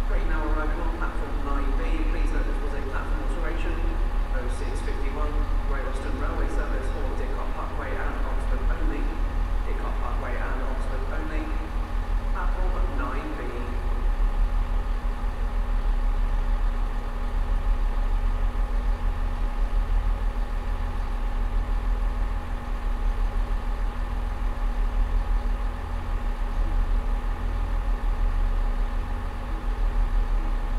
Bagnall Way, Reading, UK - Reading Railway Station
Early morning meditation at the eastern end of Reading railway station whilst waiting for a train. The ticking over of the train engine behind me masks more distant sounds, interrupted by male and automated female announcements, the metallic chirping and ringing of rails as a freight train slowly passes, pressure bursts and doors opening and closing. (Tascam DR-05 with binaural PM-01s)
6 October, 06:52